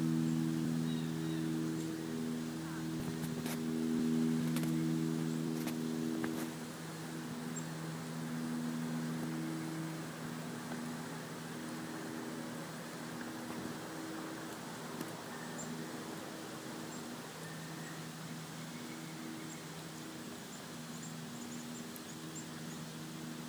{"title": "Vassar College, Raymond Avenue, Poughkeepsie, NY, USA - Vassar Farm 1:15 p.m., 2-22-15, sunny afternoon after fresh snowfall", "date": "2015-02-22 13:15:00", "description": "Vassar Farm, snowshoing on a sunny afternoon after fresh snowfall: Plane Birds Cars Voices", "latitude": "41.66", "longitude": "-73.90", "altitude": "35", "timezone": "America/New_York"}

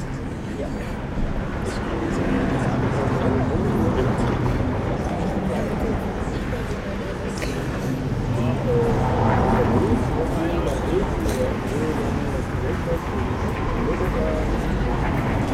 {"title": "leipzig, im NochBesserLeben", "date": "2011-08-31 21:15:00", "description": "im außencafé des NochBesserLeben in der merseburger straße ecke karl-heine-straße. straßenverkehr, stimmen der gäste. einige reagieren dann aufs mikrophon und machen absichtliche geräusche.", "latitude": "51.33", "longitude": "12.33", "altitude": "119", "timezone": "Europe/Berlin"}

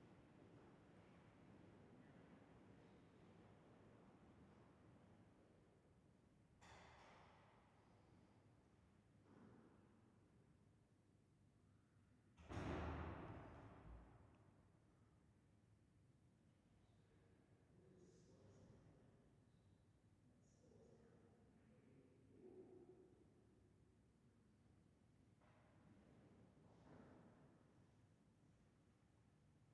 {"title": "Stiftkirche St. Peter, Salzburg, Österreich - Raumklang Stiftkirche", "date": "2007-04-17 11:50:00", "description": "Touristen, Glocken, Schritte. Am Schluss Priester mit Gehrock durchschreitet das Kirchenschiff.", "latitude": "47.80", "longitude": "13.04", "altitude": "449", "timezone": "Europe/Vienna"}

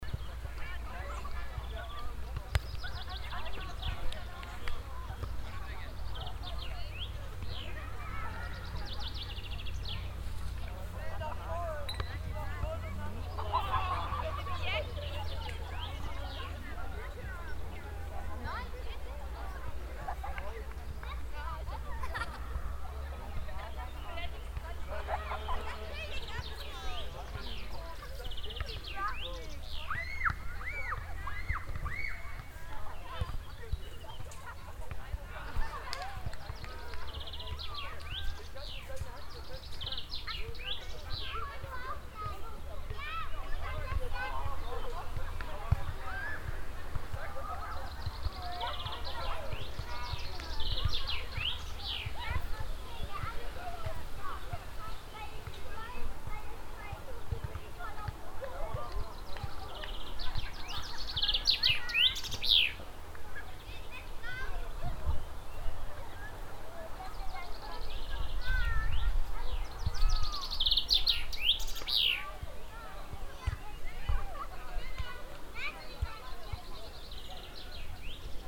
{"title": "rurberg, lakeside at the open swim areal", "date": "2010-06-28 12:35:00", "description": "walking on the meadow of the open swim areal on a saturday evening.\nIn the distance the local brass orchestra at the seasonal public ministry celebration\nsoundmap d - social ambiences and topographic field recordings", "latitude": "50.60", "longitude": "6.38", "altitude": "284", "timezone": "Europe/Berlin"}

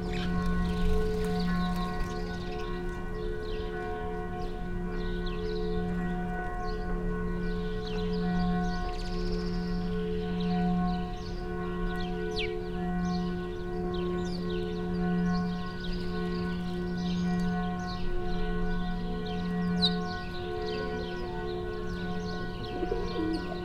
{"title": "Mariánske námestie, Žilina, Slovensko - Mariánske námestie, Žilina, Slovakia", "date": "2020-03-28 11:58:00", "description": "Almost empty square because of quarantine.", "latitude": "49.22", "longitude": "18.74", "altitude": "347", "timezone": "Europe/Bratislava"}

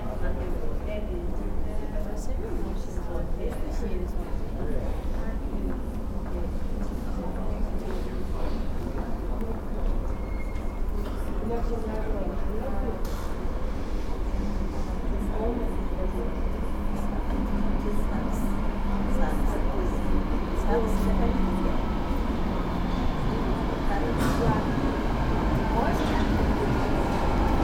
{"title": "dortmund, kamsprasse, u-bahnhof haltestelle - dortmund, kampstrasse, u-bahnhof haltestelle", "description": "morgens an u-bahn-haltestelle, wartende menschen, ein- und ausfahrt von zwei zügen\nsoundmap nrw\nsocial ambiences/ listen to the people - in & outdoor nearfield recordings", "latitude": "51.52", "longitude": "7.46", "altitude": "95", "timezone": "GMT+1"}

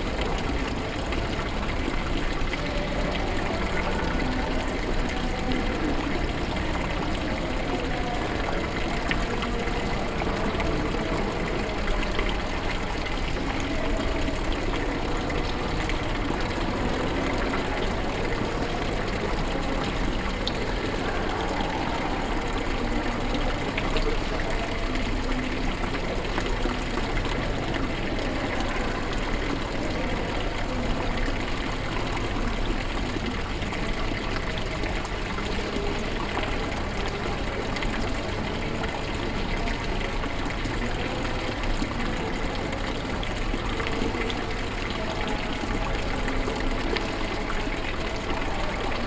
{"date": "2011-06-03 11:42:00", "description": "Norway, Oslo, Oslo Radhus, Hall, fountain, water, binaural", "latitude": "59.91", "longitude": "10.73", "altitude": "18", "timezone": "Europe/Oslo"}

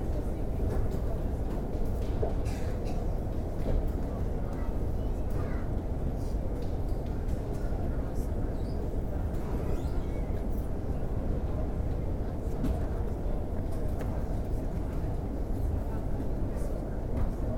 {"title": "Airport Nice Cote D'Azur (NCE), Rue Costes et Bellonte, Nice, France - Waiting at baggage reclaim", "date": "2013-07-12 14:25:00", "description": "Waiting for the bags to turn up on the carousel, I found myself listening to how quiet the space was, comparatively. Folk waited patiently, a bit bored, listless in the heat, and the carousel didn't have any of the normal shrieks, squeaks, or bangs, but purred quite quietly along, bringing people their luggage in a leisurely way.", "latitude": "43.66", "longitude": "7.21", "altitude": "5", "timezone": "Europe/Paris"}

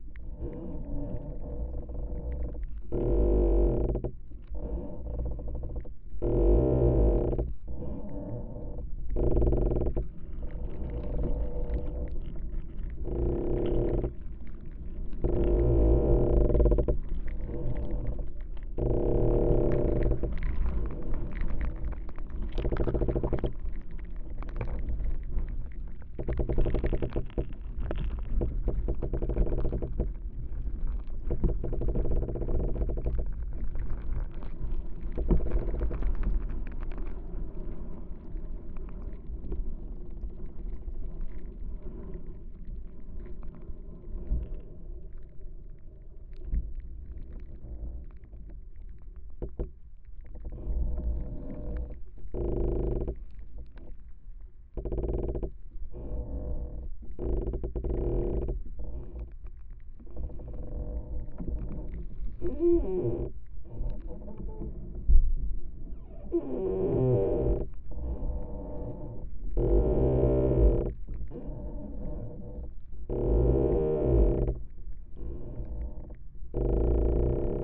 {"title": "Utena, Lithuania, creaking winter tree", "date": "2019-02-12 18:15:00", "description": "contact microphone on creaking tree...it is getting cold again...", "latitude": "55.52", "longitude": "25.63", "altitude": "130", "timezone": "GMT+1"}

